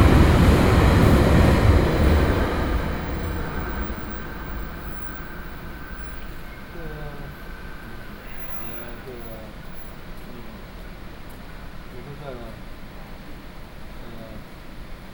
Taipei, Taiwan - In the train station platform
Taipei City, Taiwan